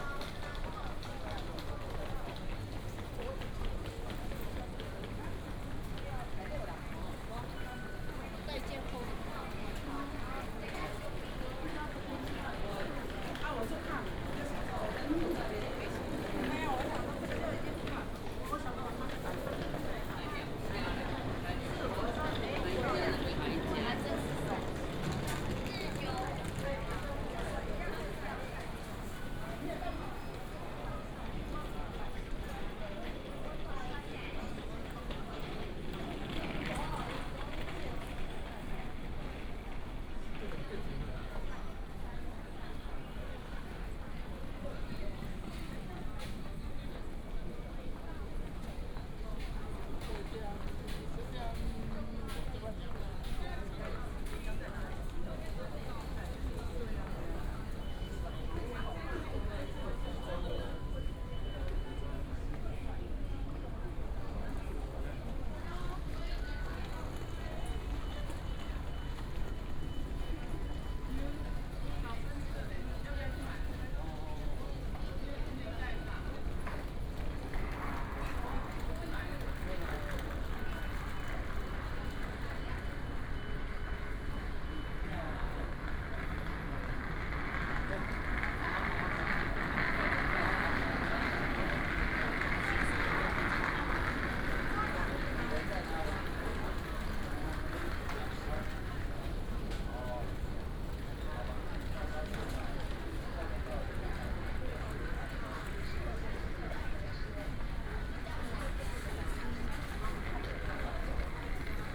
Taipei Station, Taipei City - Walk into the station
Walk into the station, Walking on the ground floor
Taipei City, Taiwan